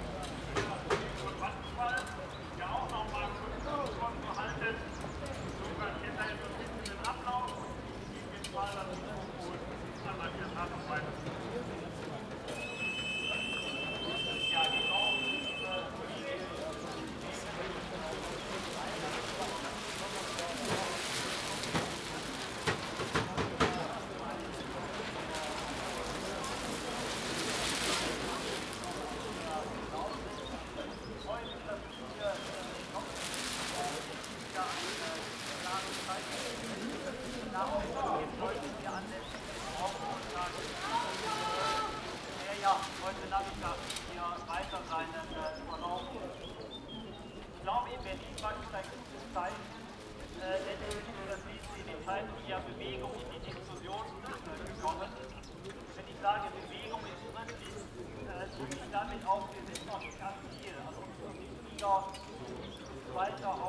Altstadt-Nord, Köln, Deutschland - Demonstration of brown coal miners
500 workers from the brown coal industry demonstrate for their workplace which they fear are in danger since the government is pushing for a reduction of CO2 emissions. A representative of the union is speaking.